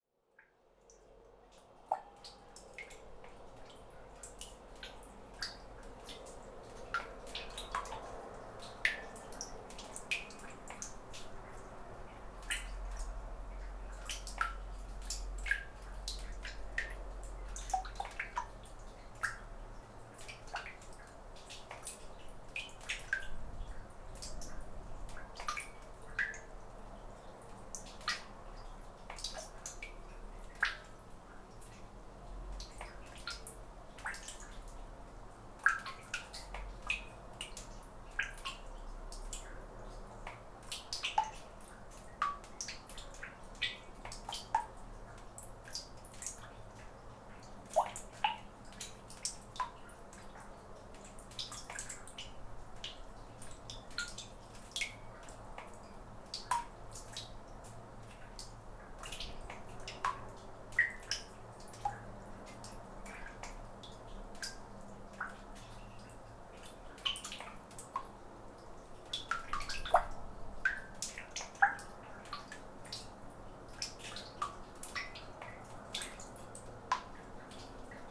Recording of a manhole on the side of a street. Large amount of snow was melting and dripping, droplets reverberating inside. Distant highway hum and occasional passing car can also be heard. In the end, a car passes by at higher speed, smearing the microphones with snow. Recorded with ZOOM H5.